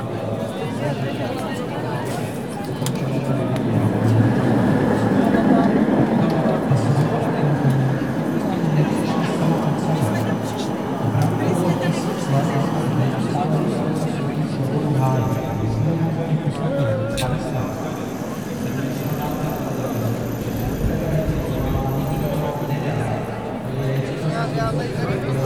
Česká, Brno-Brno-střed, Česko - Walk Through a Crowd Of Protesters, Freedom Square (Náměstí Svobody)
Recorded on Zoom H4n, 28.10. 2015.